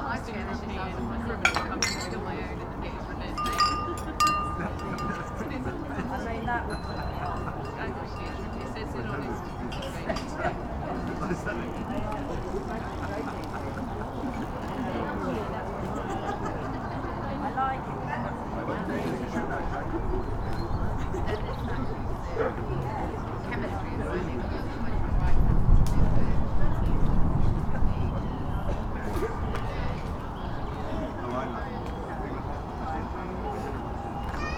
Oxford, UK - outside restaurant, ambience
ambience outside of a restaurant near river Thames, at a sunny Saturday afternoon in early spring
(Sony PCM D50)